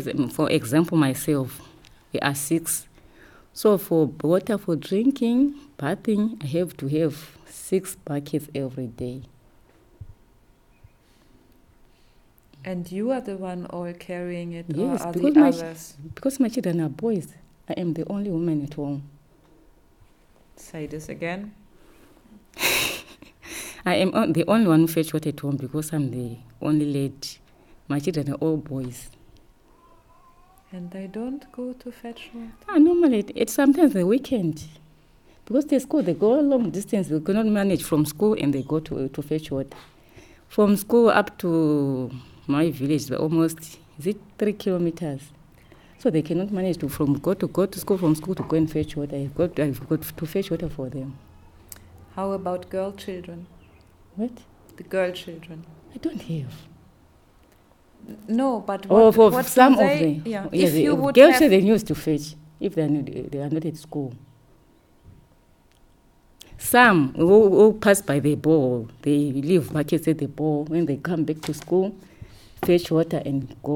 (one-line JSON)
{"title": "Tusimpe Pastoral Centre, Binga, Zimbabwe - water is an issue where i come from...", "date": "2016-07-05 11:20:00", "description": "...we discover that the issue of getting water for the family will be an issue not easily understood by listeners from places where water flows continuously from taps... so we asked Lucia to try again, focusing just on the issue of water...\nthe workshop was convened by Zubo Trust\nZubo Trust is a women’s organization bringing women together for self-empowerment.", "latitude": "-17.63", "longitude": "27.33", "altitude": "605", "timezone": "GMT+1"}